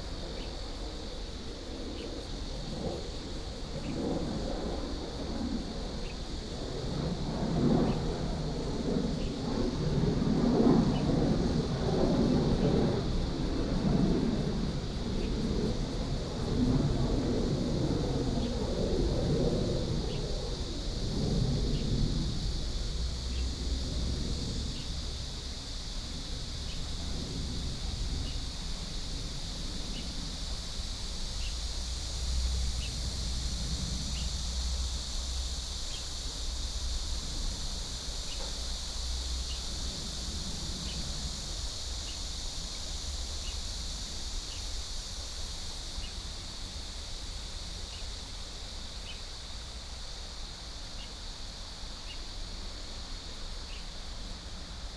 新街溪, Dayuan Dist. - On the river bank
On the river bank, Stream sound, Birds sound, Cicada cry, traffic sound, The plane flew through
Dayuan District, Taoyuan City, Taiwan, 26 July 2017